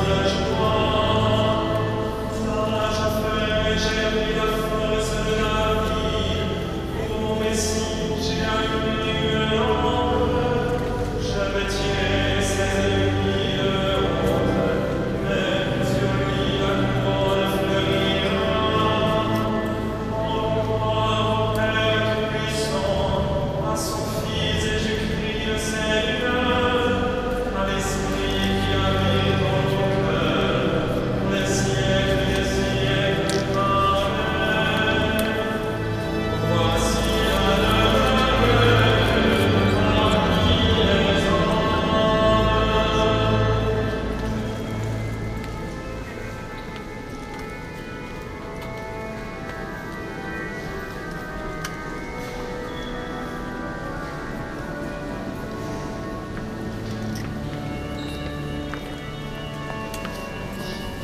Fragment of a mass in de Cathédrale de Notre Dame (1). Binaural recording.
Paris, France